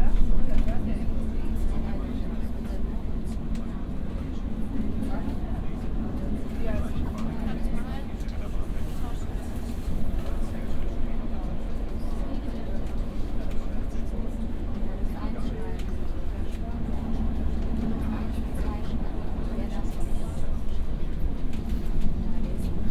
cologne, in strassenbahn, nächster halt heumarkt
in strassenbahn - haltestellenansgae und durchsage des fahrers
soundmap nrw: social ambiences/ listen to the people - in & outdoor nearfield recordings